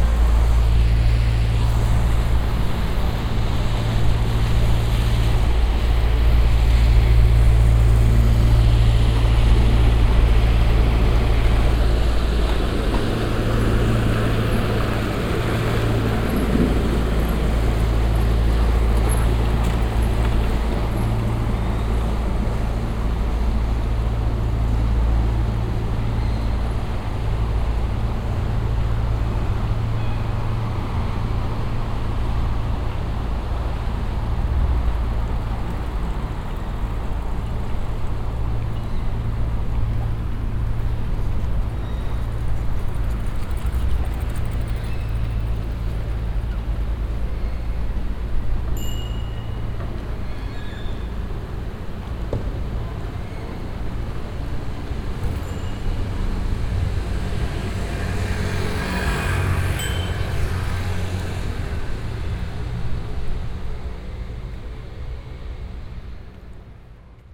amsterdam. herengracht, water birds and a boat
a water bird family passing by on the herengracht channel followed by a classical amsterdam channel motor boat
international city scapes - social ambiences and topographic field recordings